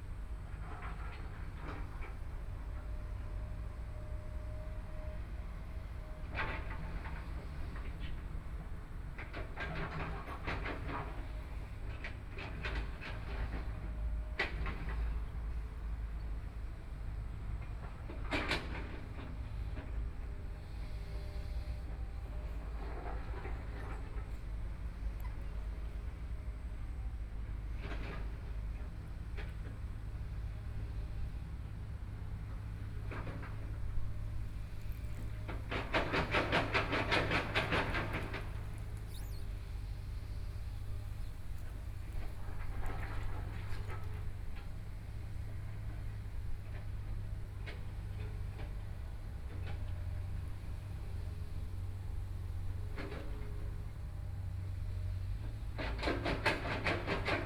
羅東林業文化園區, Yilan County - Beside the railway tracks
in the Park, the construction site noise, Trains traveling through
Yilan County, Taiwan